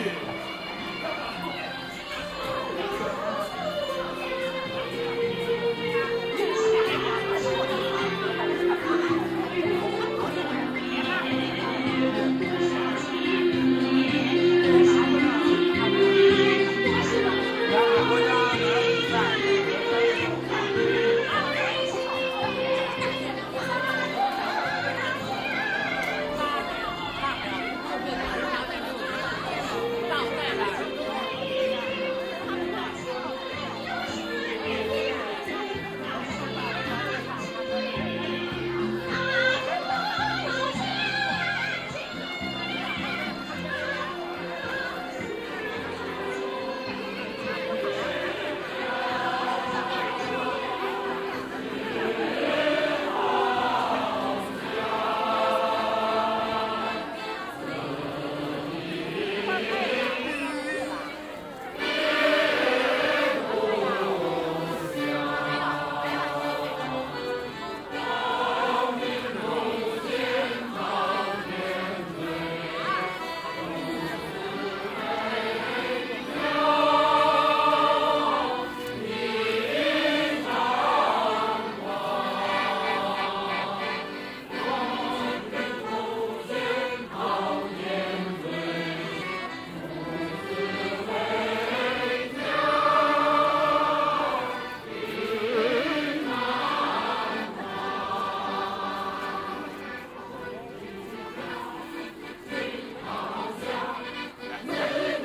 beijing, temple of heaven, abendgesänge
recorded in nov 07, in the early evening - on the way to the main temple, different ensembles of amateur musicians performing for themselves and passing visitors. some groups sing in chorus acoustic, other perform with battery amplified karaoke systems - footwalk no cut
international city scapes - social ambiences and topographic field recordings
19 May 2008